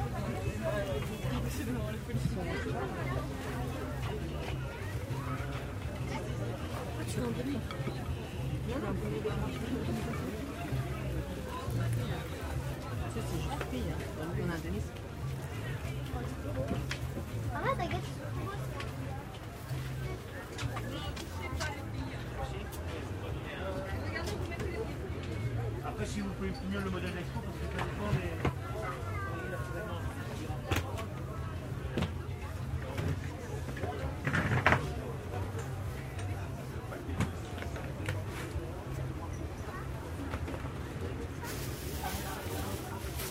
maeché de saint paul traveling sonore
vendredi aprés midi dans le marché de saint paul de la réunion
St Paul, Reunion, July 2010